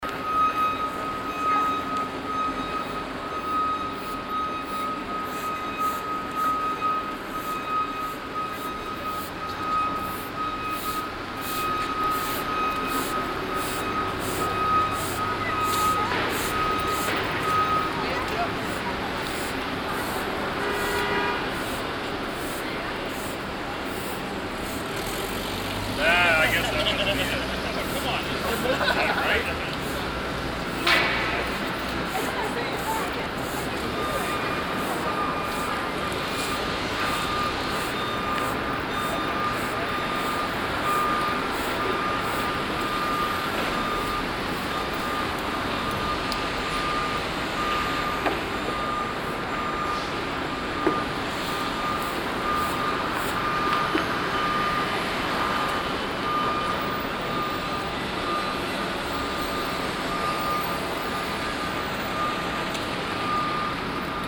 vancouver - west cordova street - broom, construction, traffic
a streetworker cleaning the street with a broom, traffic passing by, in the distance a heavy street construction going on
soundmap international
social ambiences/ listen to the people - in & outdoor nearfield recordings